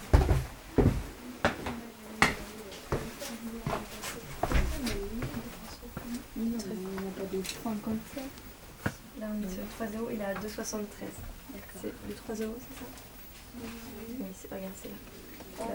Chartres, France - Creative arts store
A small walk into a creative arts store. This is located in a very old traditional house.